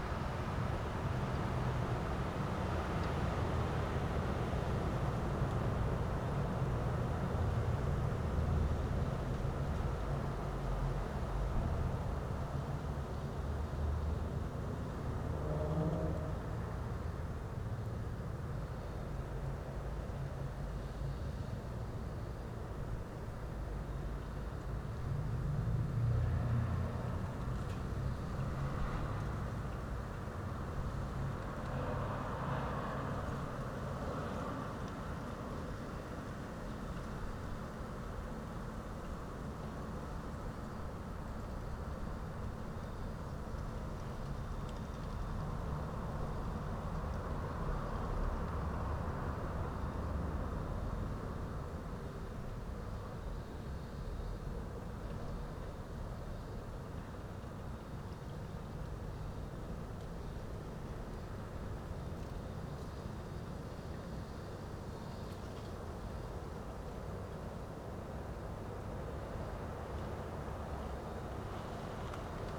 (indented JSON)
{
  "title": "remscheid: johann-sebastian-bach-straße - the city, the country & me: on the rooftop",
  "date": "2014-03-27 23:08:00",
  "description": "stormy night, mic on the rooftop\nthe city, the country & me: march 27, 2014",
  "latitude": "51.18",
  "longitude": "7.18",
  "altitude": "348",
  "timezone": "Europe/Berlin"
}